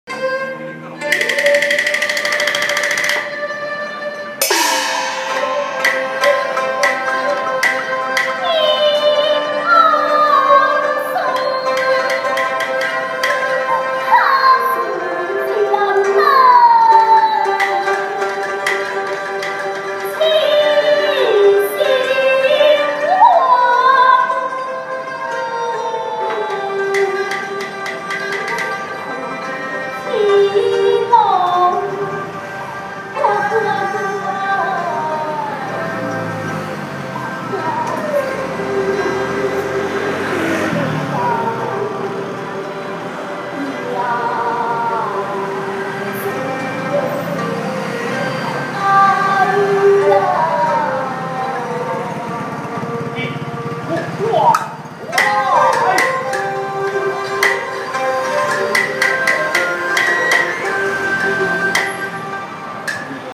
Tainan Koxinga ancestral shrine 台南鄭成功祖廟 - Taiwanese Opera performance 歌仔戲表演
The ceremony hold in Tainan Koxinga ancestral shrine.
West Central District, Tainan City, Taiwan, 26 June